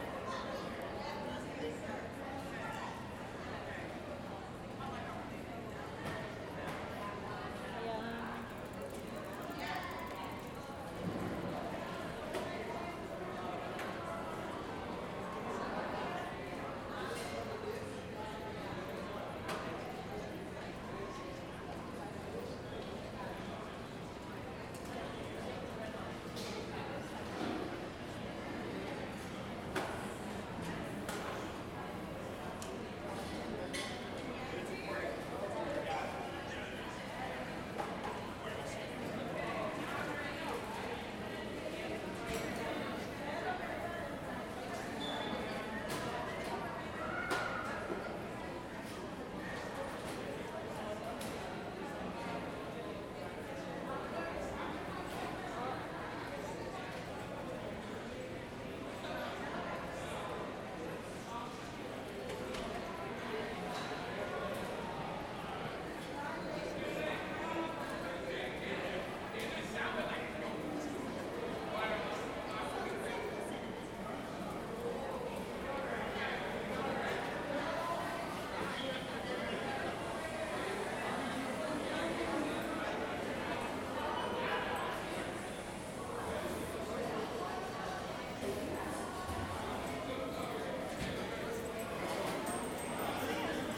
Civic Center / Little Tokyo, Los Angeles, Kalifornien, USA - LA - union station, big hall
LA - union station, big hall; passengers and customers passing by, announcements;
CA, USA, 24 January 2014